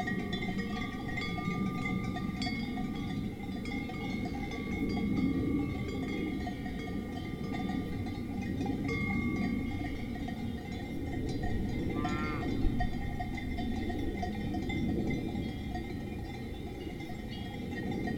Arzier-Le Muids, Suisse - Swiss Cowbells
Recorded with Zoom H2.
An "alpage pasture" landscape where echoes cowbells.
Here is an association of anthrophony and biophony : cowbells are musical instruments designed by humans to identify herds and/or their owners (each owner has his specific sound signature), but cowbells only resonate with the movement of the animal.
In the middle of the recording, a plane can be heard over cowbells : loud anthrophony above these mountains.
Some mooings can be heard too (biophony).